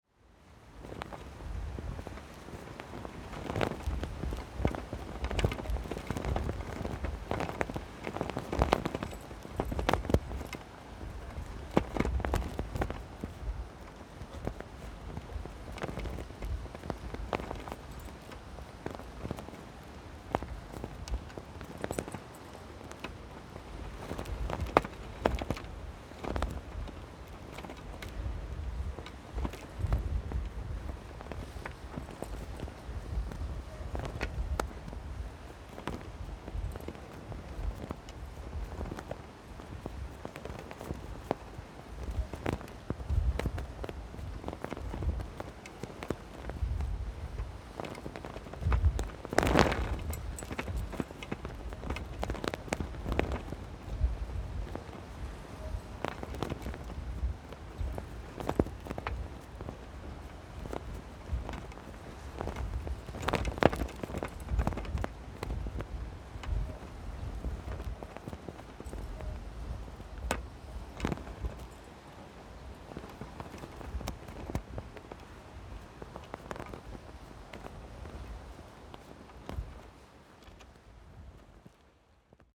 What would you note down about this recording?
Wind and flags, Zoom H6 + Rode NT4